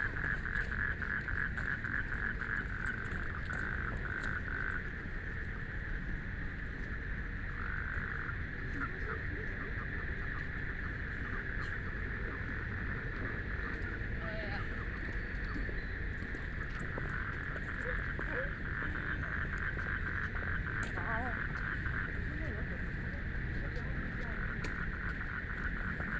BiHu Park, Taipei City - Frogs sound
The park at night, Traffic Sound, People walking and running, Frogs sound
Binaural recordings